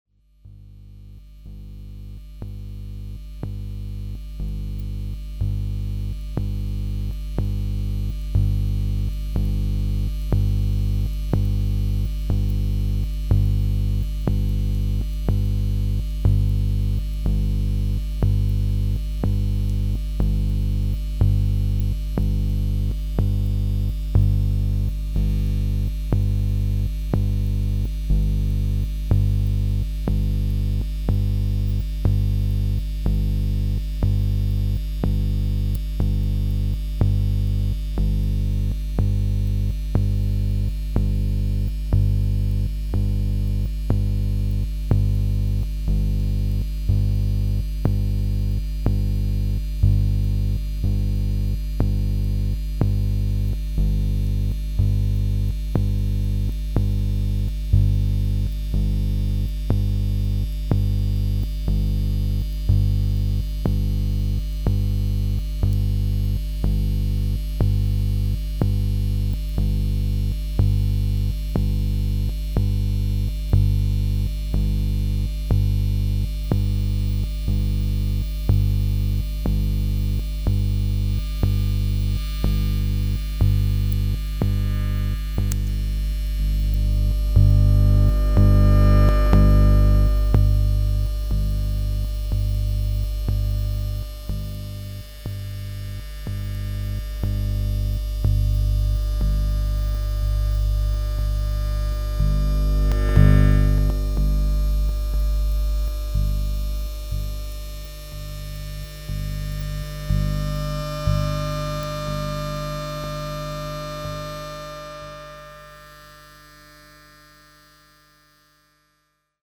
The magnetic field song of a rail network red light. Curiously it lights and stops every four pulse.
Court-St.-Étienne, Belgium, 15 March